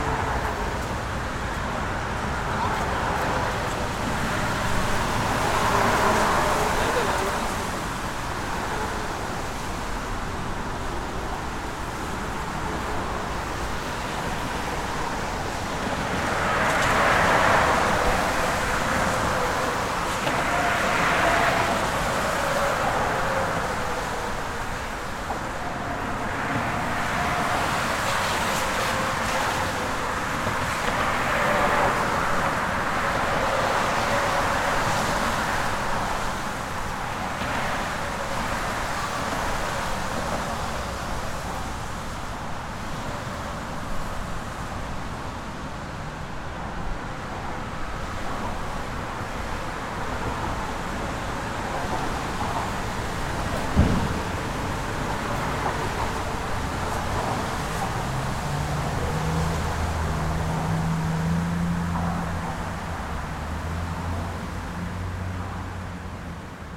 Williamsburg Bridge, Brooklyn, NY, USA - Williamsburg Bridge after Heavy Rain
Sounds of water from the rain and traffic.